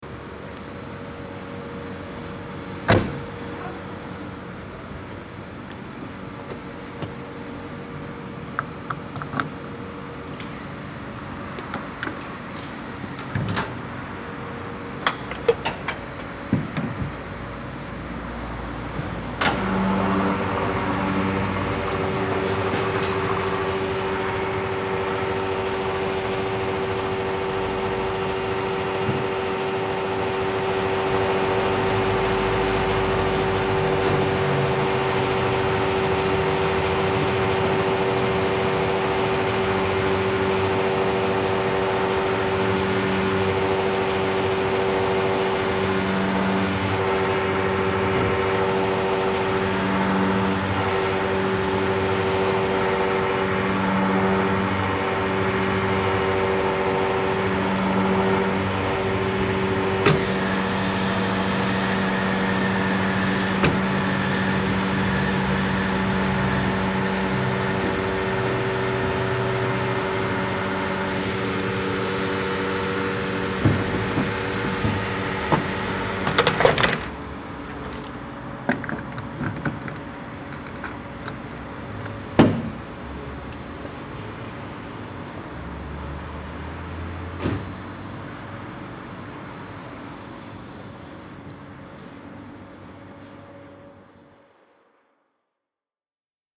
Huldange, Luxemburg - Huldange, gas station
An einer Tankstelle nahe der belgisch, deutschen Grenze.Der Klang des öffnen des Tankverschlusses, das Befüllen mit Benzin und das Geräusch der Tanksäule
At a gas station near the belgium and german border. The sound of opening the tank and filling it with gasoline. Parallel the sound of the gasoline pump.